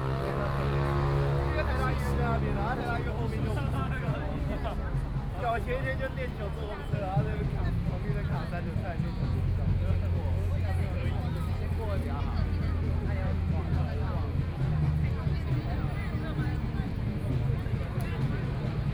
{"title": "Legislative Yuan, Taipei City - Occupy Taiwan Legislature", "date": "2014-03-20 22:20:00", "description": "Occupy Taiwan Legislature, Walking through the site in protest, Traffic Sound, People and students occupied the Legislature\nBinaural recordings", "latitude": "25.04", "longitude": "121.52", "altitude": "11", "timezone": "Asia/Taipei"}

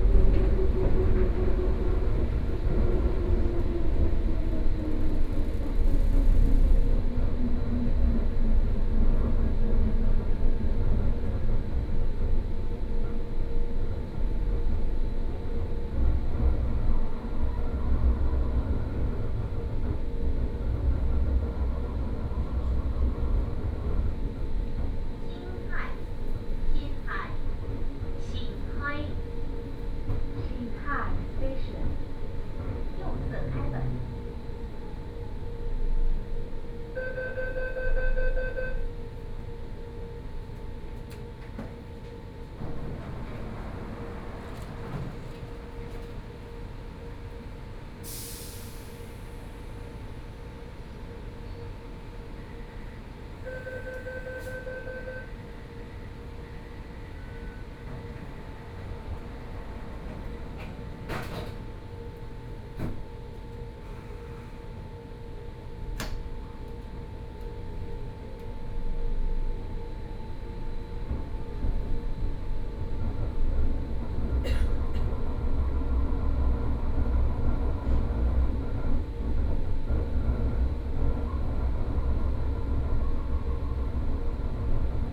{"title": "Daan District, Taipei City - Wenshan Line (Taipei Metro)", "date": "2013-09-30 13:59:00", "description": "from Liuzhangli Station to Muzha Station, Sony PCM D50 + Soundman OKM II", "latitude": "25.01", "longitude": "121.56", "altitude": "53", "timezone": "Asia/Taipei"}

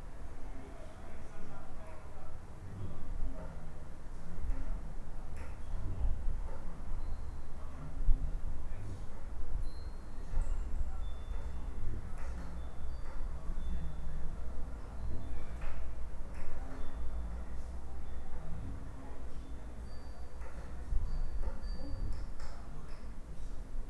{"title": "apro la noce - shes sleeping", "date": "2010-06-12 02:02:00", "description": "shes sleeping, open windows, the wind bells ringing...", "latitude": "42.85", "longitude": "13.59", "altitude": "147", "timezone": "Europe/Rome"}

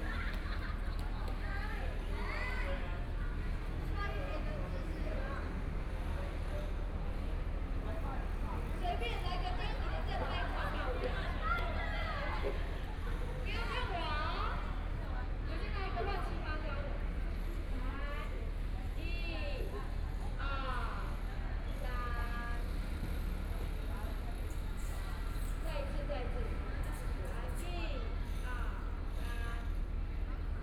{"title": "Juejiang St., Yancheng Dist. - In the Square", "date": "2014-05-21 16:57:00", "description": "Art the square outside of galleries, Many students, Engineering Noise, Birds singing\nSony PCM D50+ Soundman OKM II", "latitude": "22.62", "longitude": "120.28", "altitude": "9", "timezone": "Asia/Taipei"}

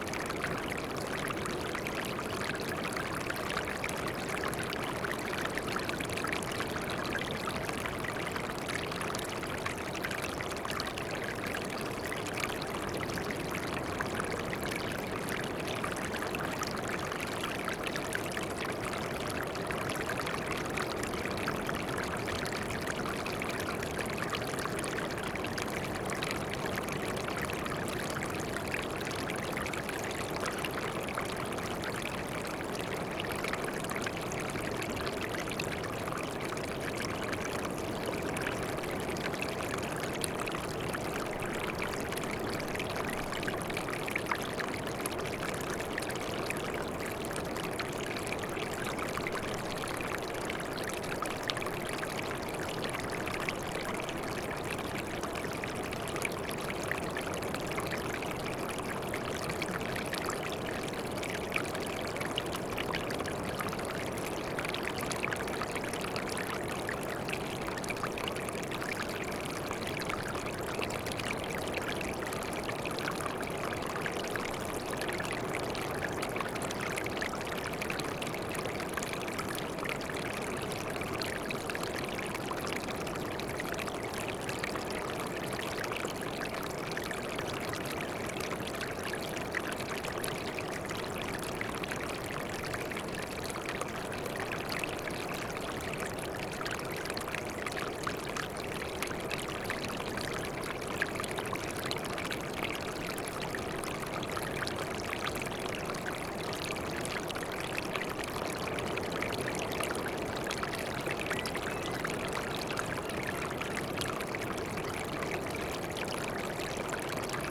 {"title": "Whitby, UK - waterfall ette ...", "date": "2014-06-26 10:00:00", "description": "Water running over a small ledge into a rock pool ... under Whitby East Cliffs ... open lavalier mics on mini tripod ... bird calls ... herring gull ...", "latitude": "54.49", "longitude": "-0.61", "altitude": "1", "timezone": "GMT+1"}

